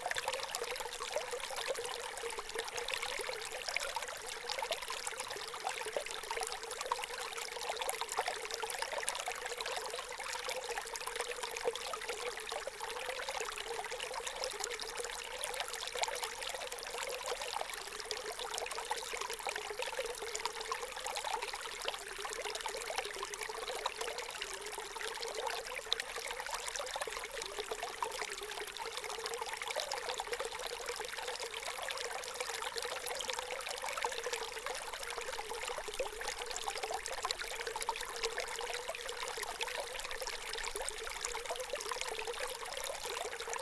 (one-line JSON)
{"title": "Mayres, France - Stream", "date": "2016-04-25 11:00:00", "description": "We are searching an old abandoned mine. We didn't find it. From a completely collapsed area, a small stream gushes.", "latitude": "44.66", "longitude": "4.10", "altitude": "657", "timezone": "Europe/Paris"}